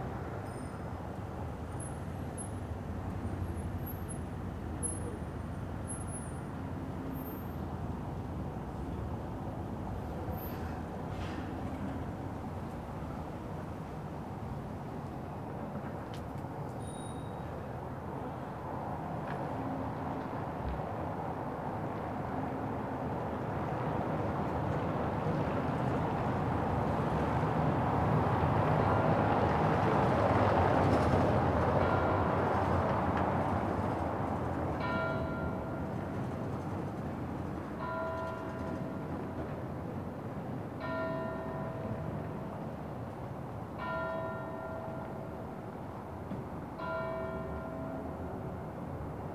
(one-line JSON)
{"title": "Reuterstrasse: Balcony Recordings of Public Actions - Public Clapping Day 03", "date": "2020-03-23 19:16:00", "description": "Clapping was less today. But the quietness of the street is remarkable.\nPCM D100 from the balcony.", "latitude": "52.49", "longitude": "13.43", "altitude": "43", "timezone": "Europe/Berlin"}